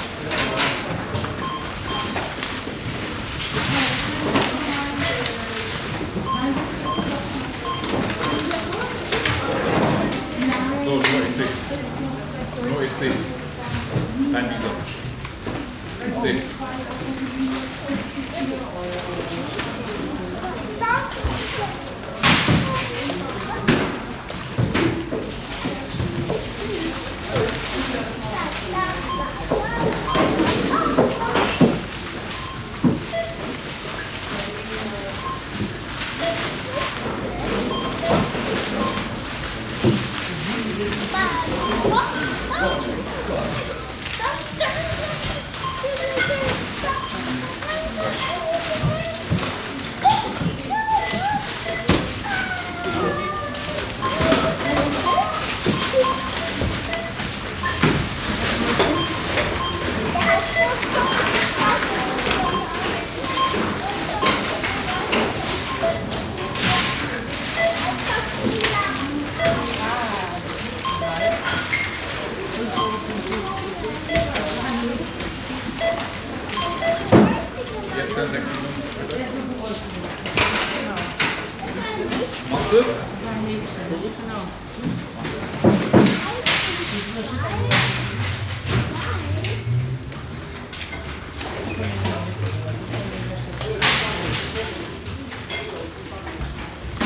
In einem Discounter Geschäft. Der Klang der elektronischen Kassen, die Einkaufswagen und Stimmen.
Inside a discounter store. The sound of the EDC tills and shopping carts with voices.
Projekt - Stadtklang//: Hörorte - topographic field recordings and social ambiences